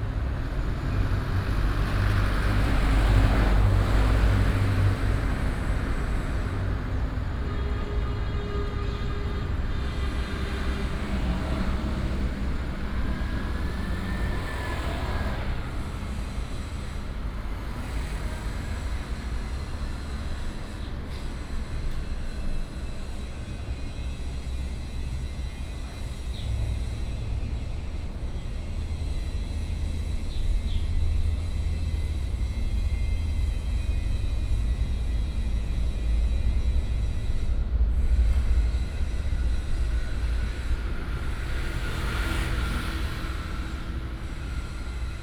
Qianjia Rd., East Dist., Hsinchu City - Factories and highways
Factories and highways sound, Traffic sound, Binaural recordings, Sony PCM D100+ Soundman OKM II
Hsinchu City, Taiwan, 12 September 2017